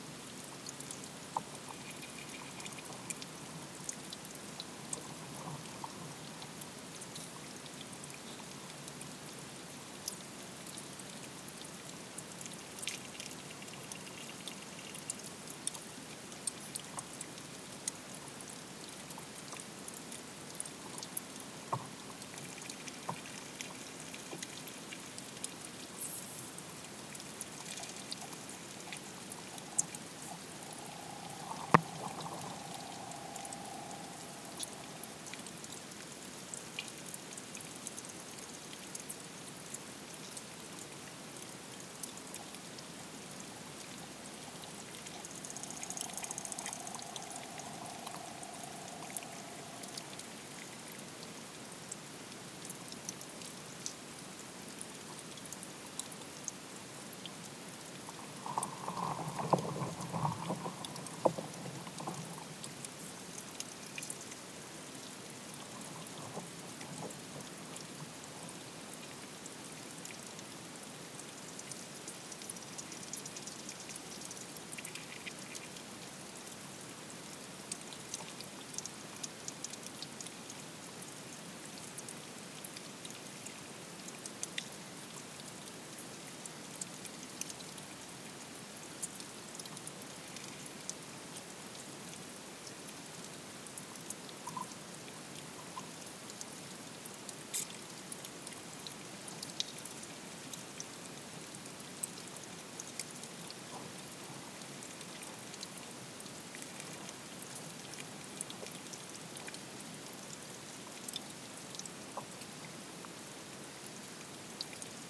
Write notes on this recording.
underwater activity in black contaiuner destined for cows to drink on the edge of the field, very hot afternoon. equip.: SD 722 + hydrophone CRT C55.